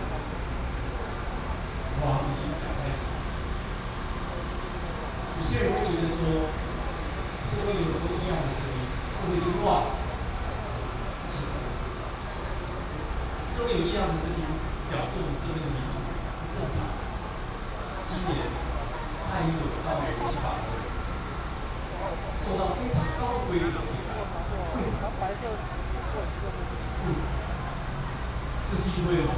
Ministry of Education, Taipei City, Taiwan - occupied the Ministry of Education
Protest site, High school students occupied the Ministry of Education
Please turn up the volume a little. Binaural recordings, Sony PCM D100+ Soundman OKM II
Zhongzheng District, Taipei City, Taiwan, 1 August